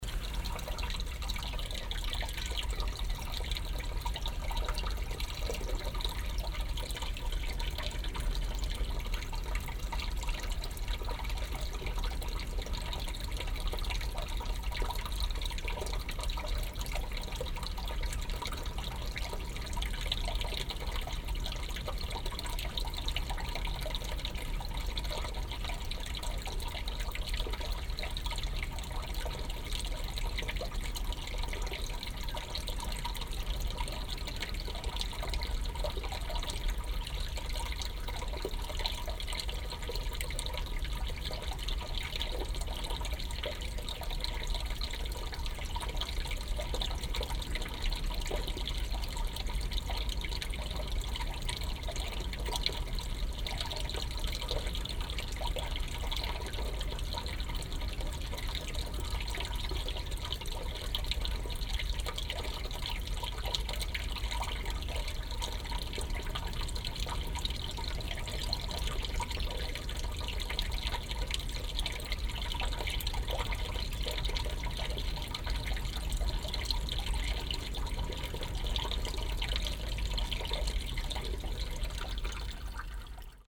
audresseles, meeresufer bei ebbe, wasserrückfluss
morgens am meeresufer bei ebbe, wasserrückfluss in der steinigen ebbelandschaft
fieldrecordings international:
social ambiences, topographic fieldrecordings